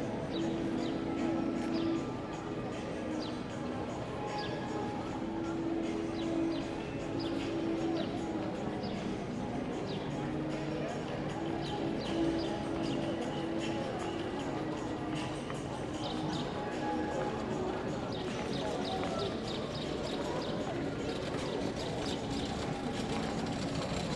Moscow, Arbat - People Traffic, Musicians
People, Musician, Street Vendors
3 June 2010, 20:30